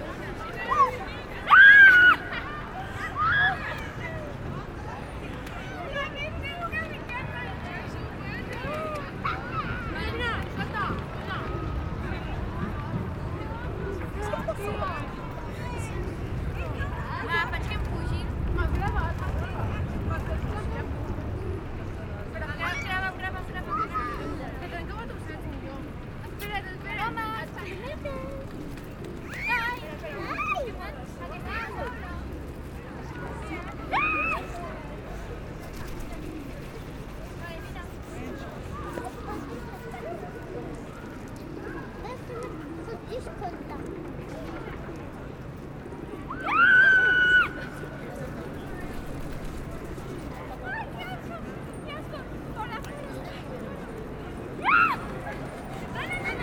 Amsterdam, Nederlands - Tourists on the central square of Amsterdam
On the central square of Amsterdam, tourists giving rice to the pigeons, teenagers shouting everytime pigeons land on the hands.
Amsterdam, Netherlands, 28 March 2019